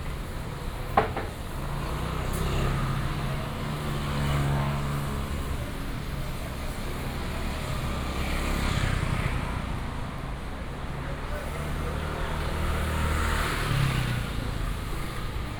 鳳山公有第二零售市場, Fengshan Dist., Kaohsiung City - in traditional market blocks
Walking in traditional market blocks, motorcycle
Kaohsiung City, Taiwan, 30 March 2018, 10:13